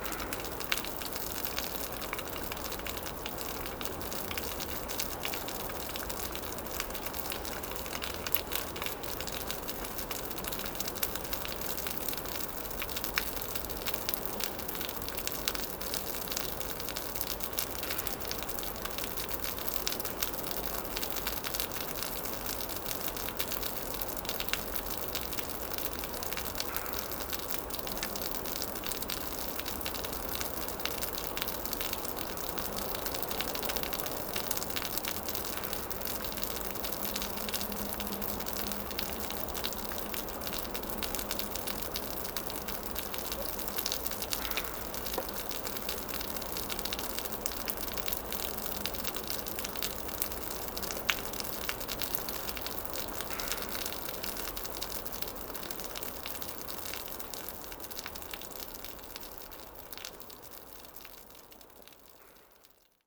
Court-St.-Étienne, Belgique - Sad rain
A long and sad rain befall on the gloomy land.